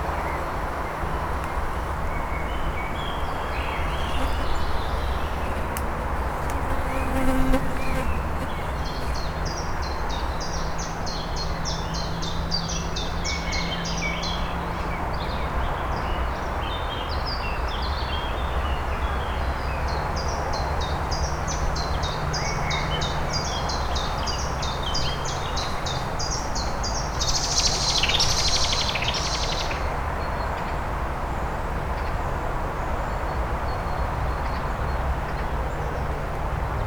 Poznan, borderline of Poznan - hidden pond
afternoon ambience at a small pond hidden in a nearby groove. hum of traffic on a north exit road out of Poznan.
Poznan, Poland, 1 May 2014